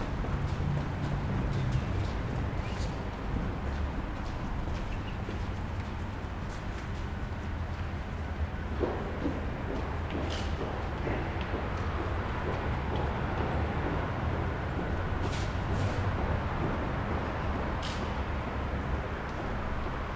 Kilinskiego Lodz, autor: Aleksandra Chciuk